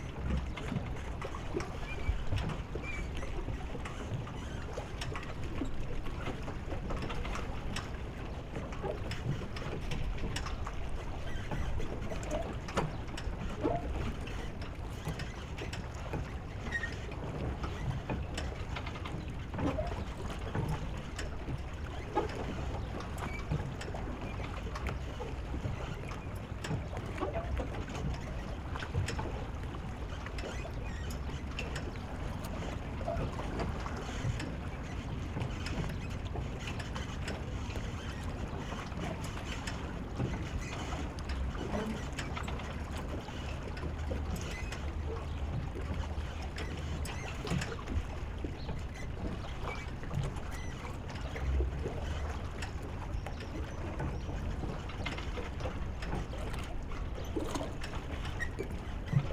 Zürich, Rote Fabrik, Schweiz - Wassersteg
Quietschen des Steges.
Zürich, Switzerland